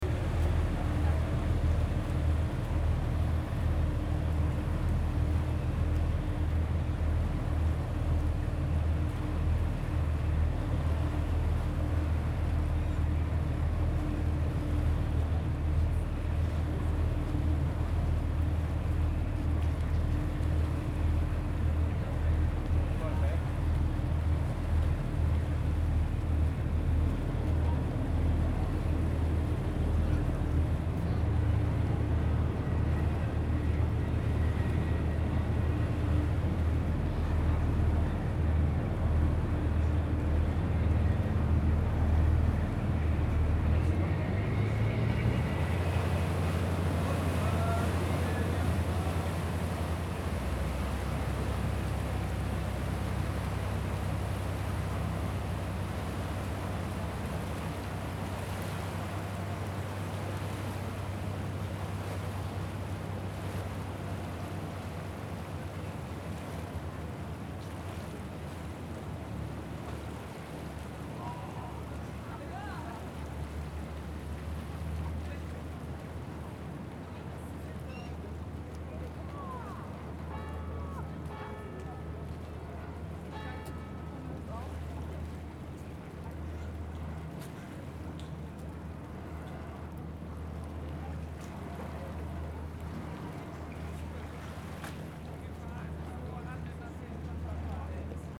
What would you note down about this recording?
The mototors of the ferryboats humming, the waves, and the clock strikes half past one.